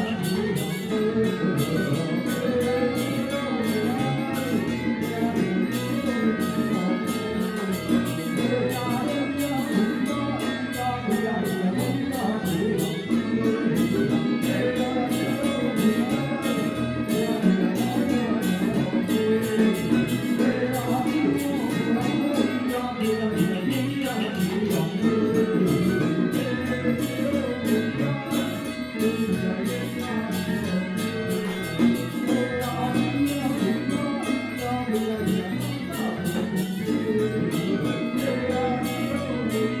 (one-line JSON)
{"title": "Qingshui District, Taichung - Funeral", "date": "2013-05-12 14:37:00", "description": "Traditional funeral ceremony in Taiwan, Zoom H4n + Soundman OKM II", "latitude": "24.27", "longitude": "120.57", "altitude": "12", "timezone": "Asia/Taipei"}